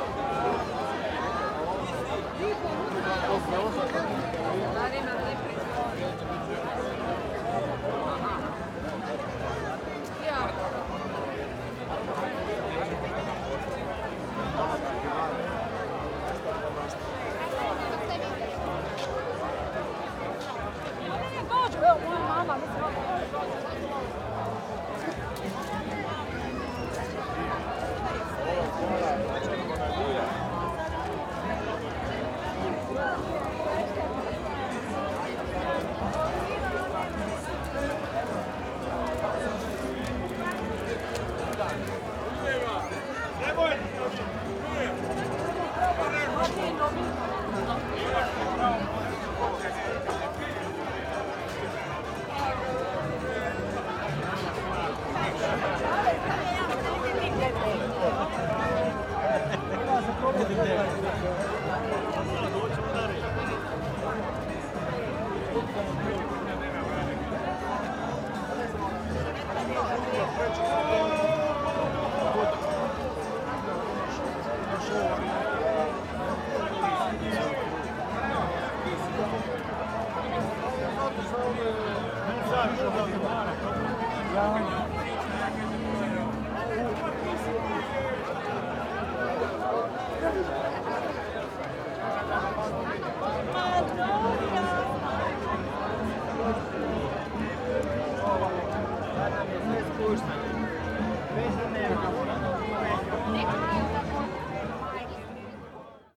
Kvarner, Kastav, Bela Nedeja, Traditional Fair
Traditional three-day fair honouring new wine mentioned as early as in the Codex of Kastav dated from 1400. Provision of versatile fair merchandise is accompanied by cultural and entertainment programme
Kastav, Croatia